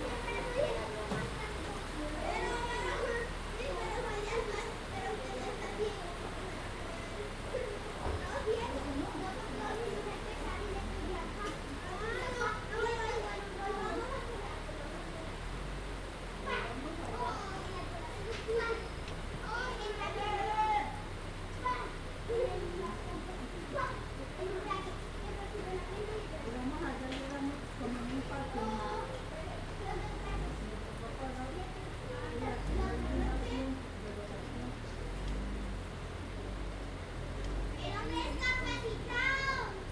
Fontibón, Bogotá, Colombia - About to rain
11 May 2013, ~17:00